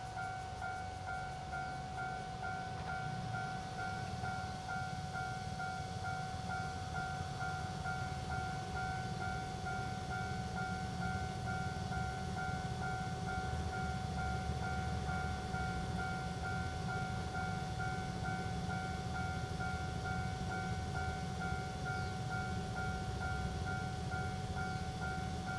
{"title": "Ln., Xinzhong N. Rd., Zhongli Dist. - Railroad Crossing", "date": "2017-07-28 06:28:00", "description": "Narrow alley, Cicada cry, Traffic sound, The train runs through, Railroad Crossing\nZoom H2n MS+XY", "latitude": "24.96", "longitude": "121.24", "altitude": "132", "timezone": "Asia/Taipei"}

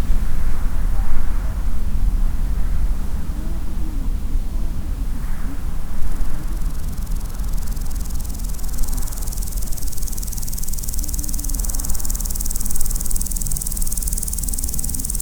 {"title": "Worcestershire Beacon, Malvern Hills, UK - Beacon", "date": "2018-07-11 13:28:00", "description": "Voices of tourists, a high jet and swirling winds recorded by placing the mics deep into the grass on the highest peak in the Malvern Hills. The distant traffic and other sounds are almost a mile away and 1000 feet lower on either side of the hills.\nMixPre 3 with 2 x Rode NT5s.", "latitude": "52.11", "longitude": "-2.34", "altitude": "398", "timezone": "Europe/London"}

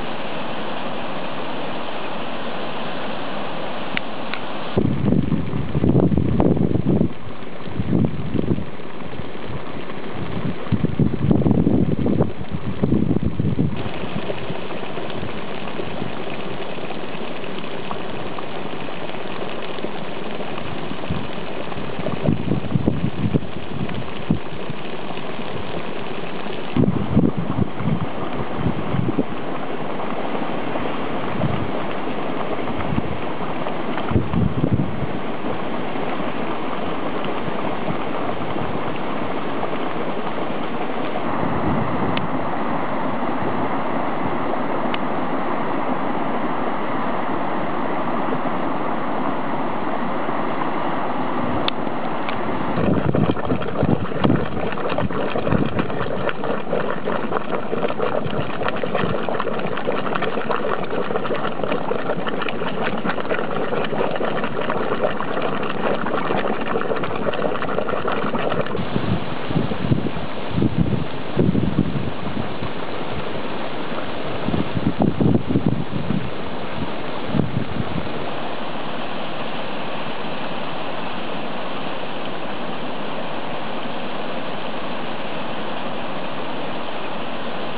{"title": "Reykjanesfólkvangur, Garðabær, Island - Seltun Geothermal Field", "date": "2010-02-17 11:21:00", "description": "The sound of the geothermal field of Seltun. You hear steam coming from the earth and hot water bubbling.", "latitude": "63.89", "longitude": "-22.07", "altitude": "159", "timezone": "Atlantic/Reykjavik"}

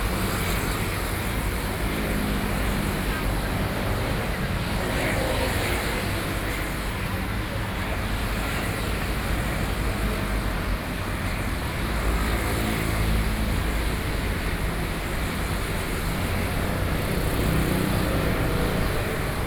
{"title": "Taipei, Taiwan - Traffic Noise", "date": "2013-08-10 21:29:00", "description": "Traffic Noise, Standing on the roadside, Aircraft flying through, Sony PCM D50 + Soundman OKM II", "latitude": "25.07", "longitude": "121.52", "altitude": "15", "timezone": "Asia/Taipei"}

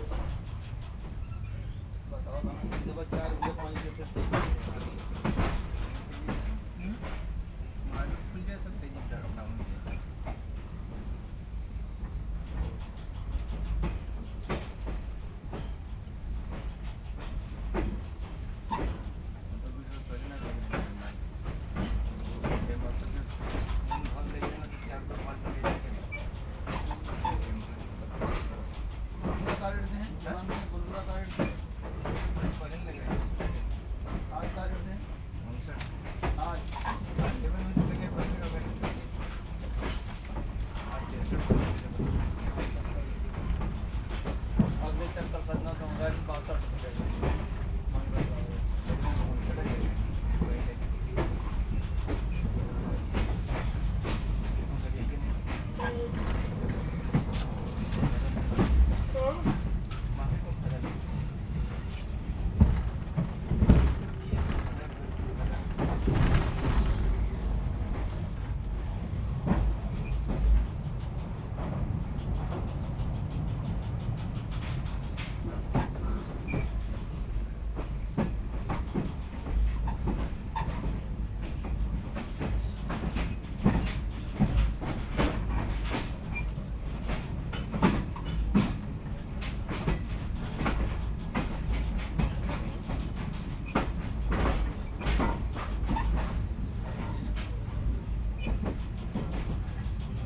{"title": "Varanasi Junction, maa surge balika intermediate collage, Railwayganj Colony, Varanasi, Uttar Pradesh, India - train leaving Varanassi", "date": "2002-01-05 21:43:00", "description": "Varanassi Junction train station, waiting in train to leave", "latitude": "25.33", "longitude": "82.99", "altitude": "82", "timezone": "Asia/Kolkata"}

